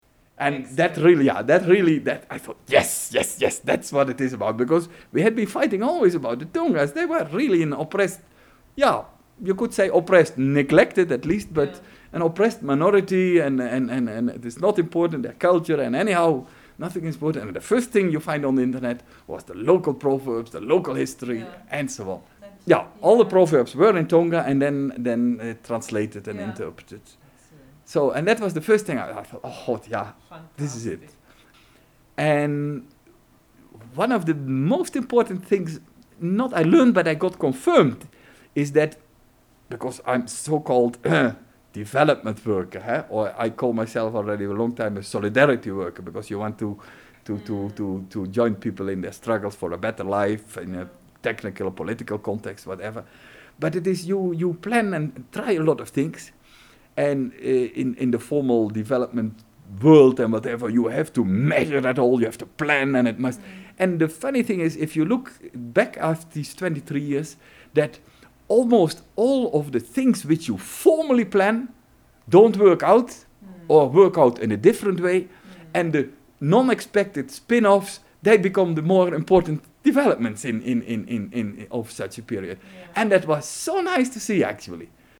Office of Rosa Luxemburg Foundation, Johannesburg, South Africa - Jos Martens – I’m a solidarity worker…
Jos describes development work in Binga in his experience and understanding...